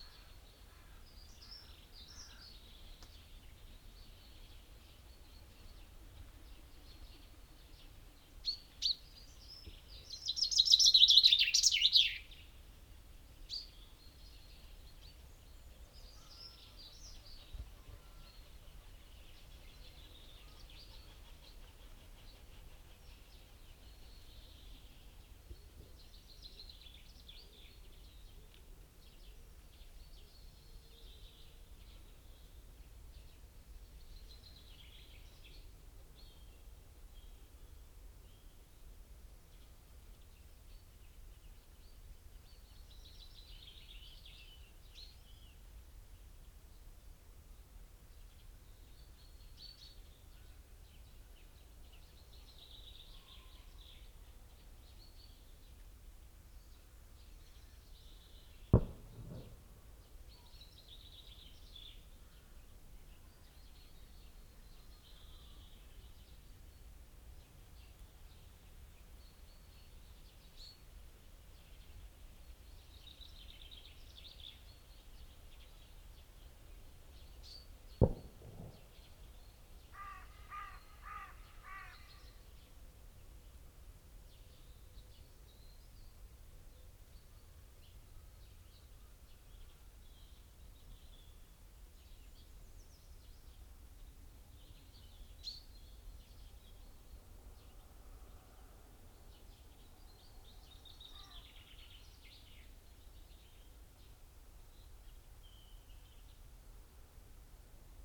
Luttons, UK - Chaffinch song soundscape ...
Chaffinch song and call soundscape ... recorded with binaural dummy head to Sony Minidisk ... bird songs ... calls from ... tree sparrow ... robin ... dunnock ... blackbird ... crow ... wood pigeon ... great spotted woodpecker ... wood pigeon ... stove dove .. blue tit ... great tit ... mute swan wing beats ... coal tit ... plus background noise ... traffic ...